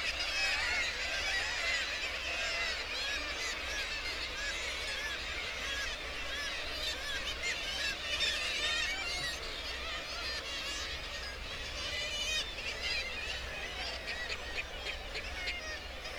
Bempton, UK - Kittiwake soundscape ...
Kittiwake soundscape ... RSPB Bempton Cliffs ... kittiwake calls and flight calls ... guillemot and gannet calls ... open lavalier mics on T bar on the end of a fishing landing net pole ... warm ... sunny ... morning ...